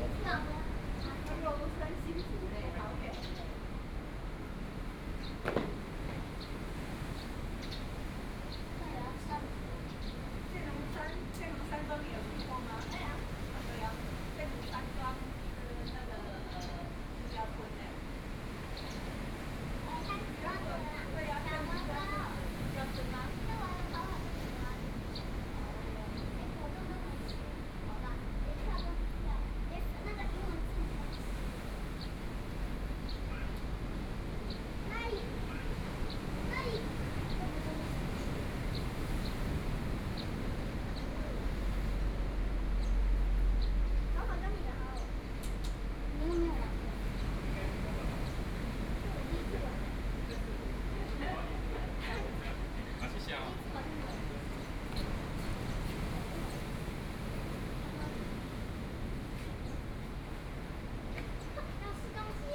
頭城鎮石城里, Yilan County - Next to the fishing port
Next to the fishing port, Tourist, On the coast, Sound of the waves, Very hot weather
Sony PCM D50+ Soundman OKM II
Toucheng Township, Yilan County, Taiwan, 21 July, ~15:00